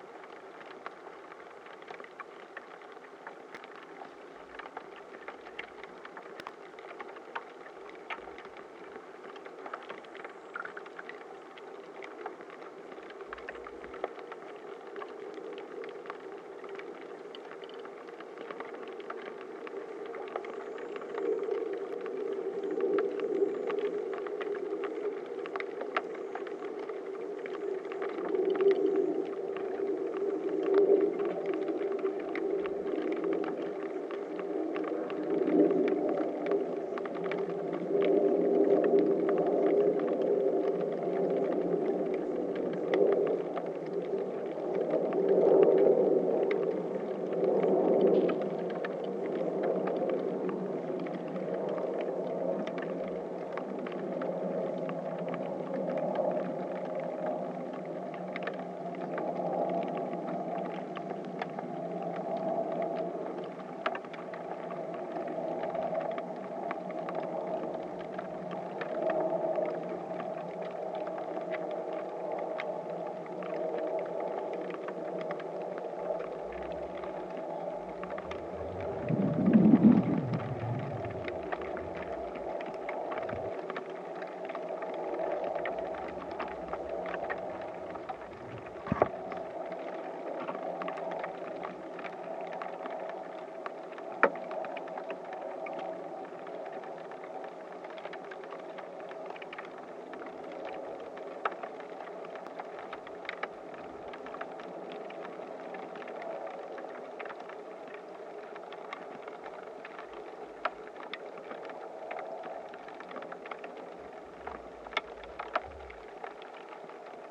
Grybeliai, Lithuania, tiny tiny ice
contact microphones on the list of very tiny ice...in the begining you can hear a plane flying above...